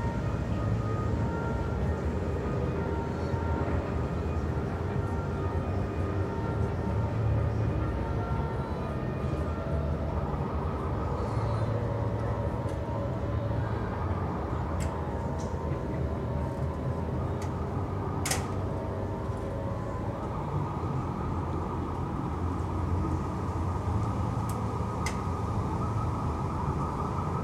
Gdyńskich Kosynierów, Danzig, Polen - Ulica Gdyńskich Kosynierów, Gdańsk - different sirens
Ulica Gdyńskich Kosynierów, Gdańsk - different sirens. [I used Olympus LS-11 for recording]